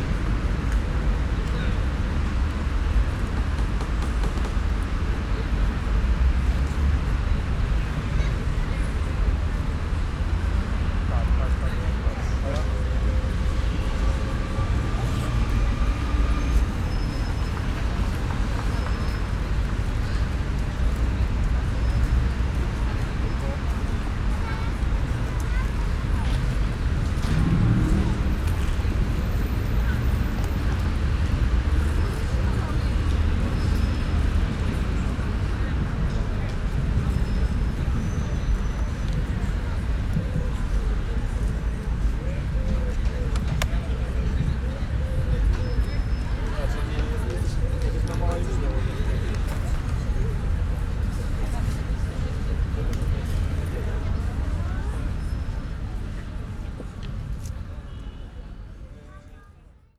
Gdańsk, Polska - Kunszt Wodny 1
Dźwięk nagrany podczas Pikniku realizowanego przez Instytut Kultury Miejskiej przy Kunszcie Wodnym